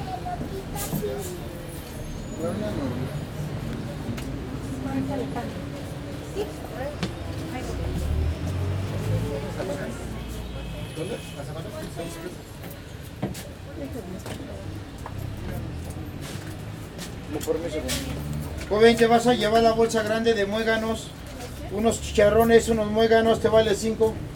Bus leaving one of the most crowded bus stations in the city.